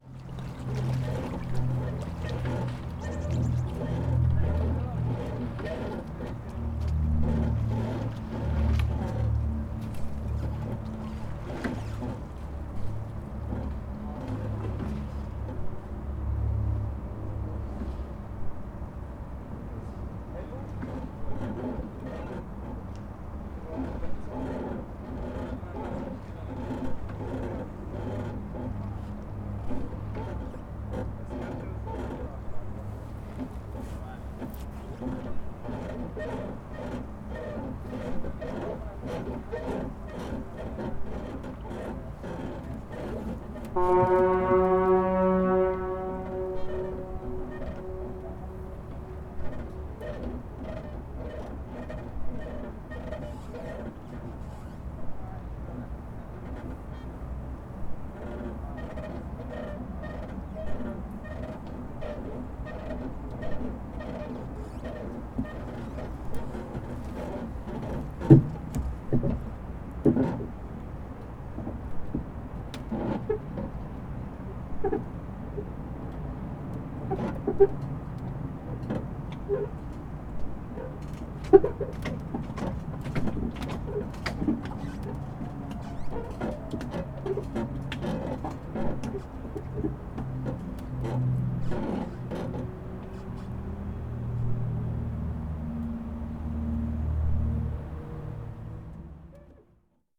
Císařská louka, Pier
I was standing on old wooden pier at Císařská louka. A pier was rocking a bit nervously caused by the small waves. There is a surprise at the middle of the recording...